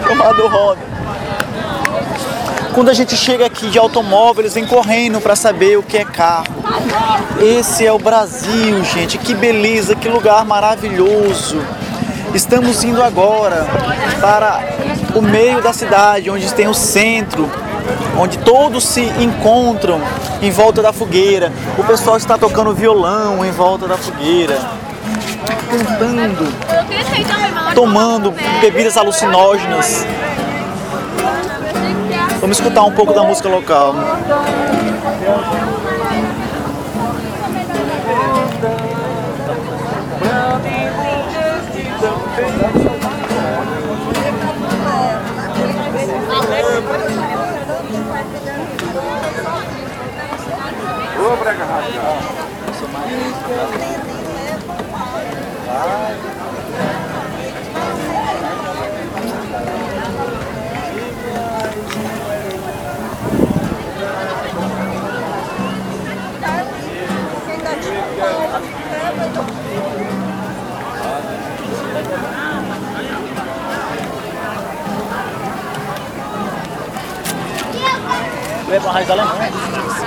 Flexeiras, Ceará, Brazil - Crazy tour guide presents the Flexeiras Beach
Crazy tour guide presents the Flexeiras Beach.